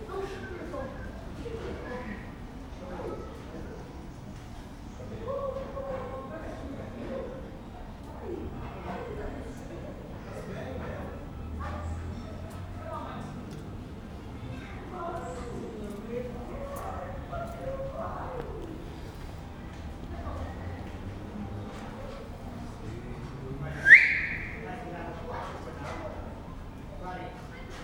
Porto, Rua de Santana - street ambience